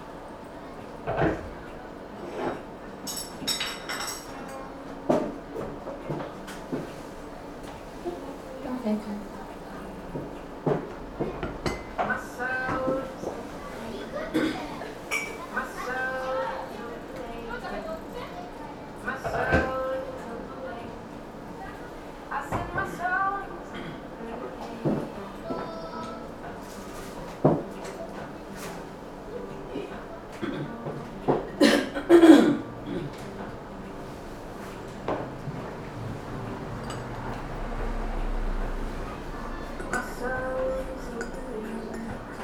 Nijo dori, Kyoto - bar, downtown Kyoto